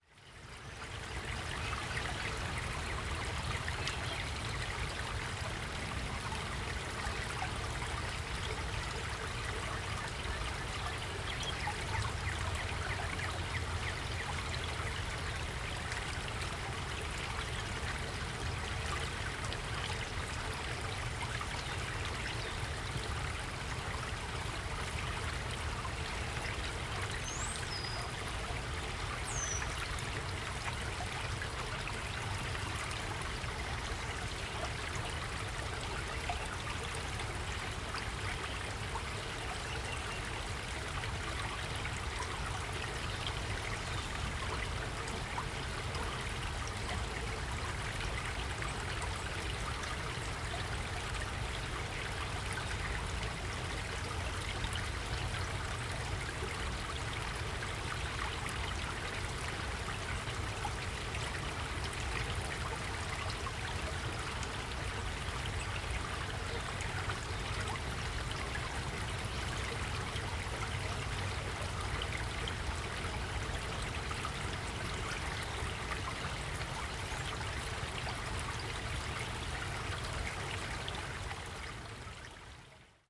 Compton Spring/Town Branch Tributary, Bentonville, Arkansas, USA - Shore Lunch
Recording of Compton Spring/Town Branch Tributary near Shore Lunch artwork from Art Trail
Arkansas, United States, 22 April 2022